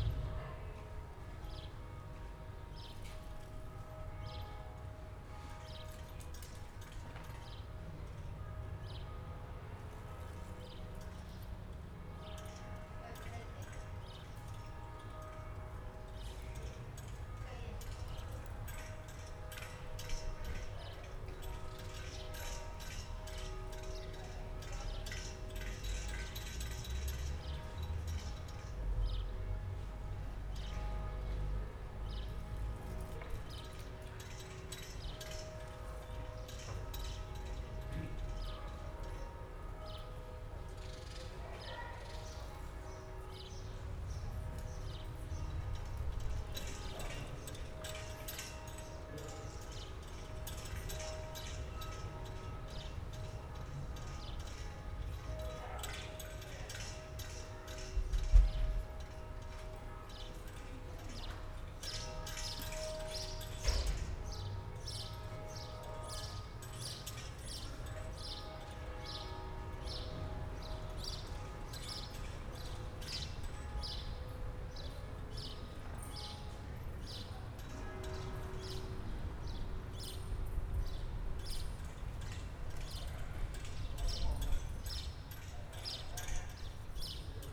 Iċ-Ċittadella, Victoria, Malta - square ambience
Pjazza Katidral, Victoria, Gozo island, afternoon ambience on cathedral square
(SD702, DPA4060)